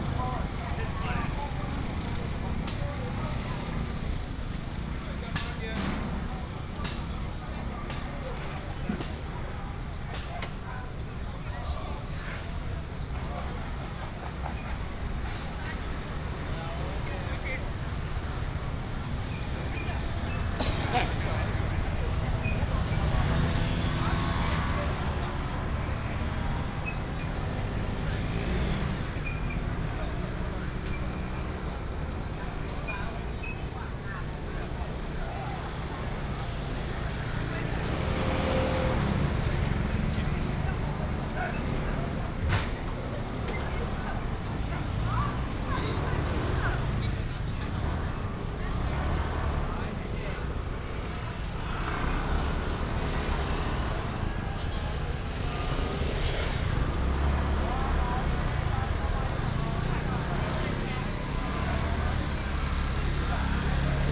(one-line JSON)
{"title": "Bade St., East Dist., Taichung City - Walking through the traditional market", "date": "2017-03-22 08:28:00", "description": "Walking through the traditional market", "latitude": "24.14", "longitude": "120.69", "altitude": "83", "timezone": "Asia/Taipei"}